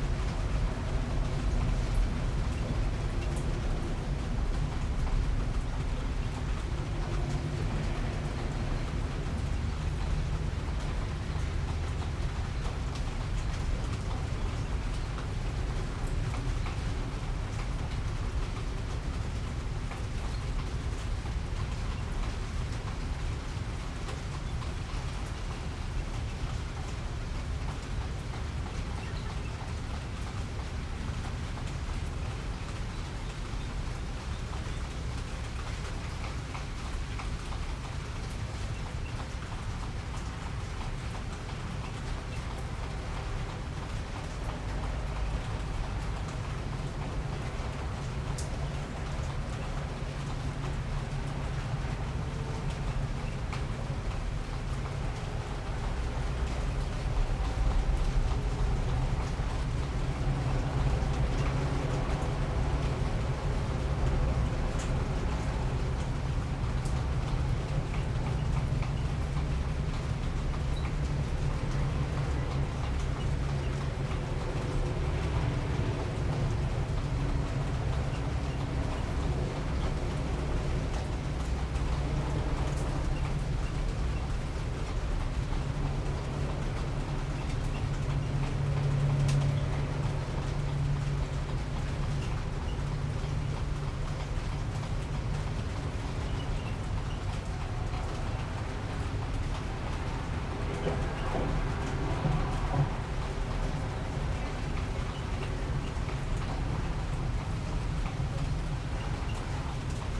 Blackland, Austin, TX, USA - Libra Full Moon Thunderstorm
Recorded with a pair of DPA 4060s and a Marantz PMD661